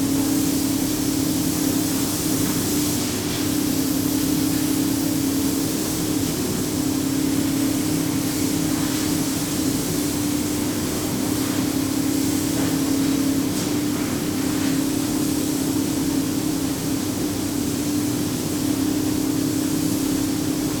Brinchang, Pahang, Malaysia - drone log 21/02/2013 a
Sungai Palas, Boh Tea production factory, tea processing
(zoom h2, build in mic)